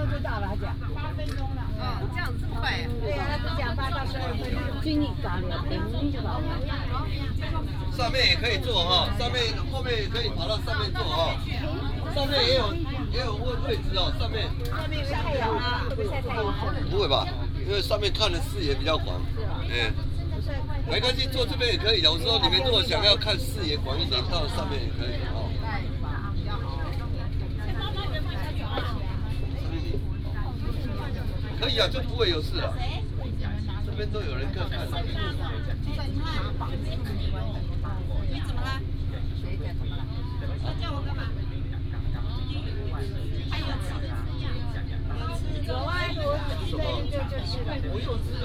福澳碼頭, Nangan Township - In the cabin
In the cabin, Tourists